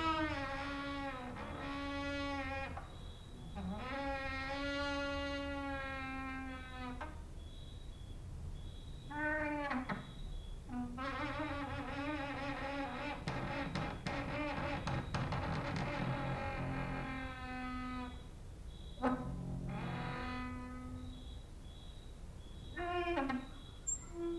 Mladinska, Maribor, Slovenia - late night creaky lullaby for cricket/15
cricket outside, exercising creaking with wooden doors inside